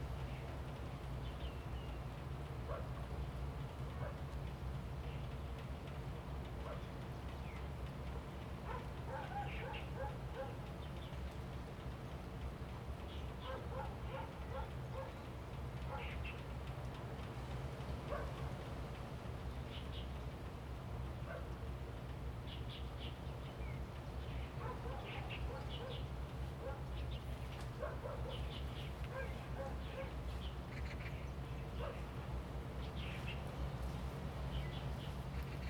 Gangzui 2nd Rd., Linyuan Dist., Kaohsiung City - In the dike above
Fishing village, In the dike above, Dog barking, Bird calls, Rainy days
Zoom H2n MS+XY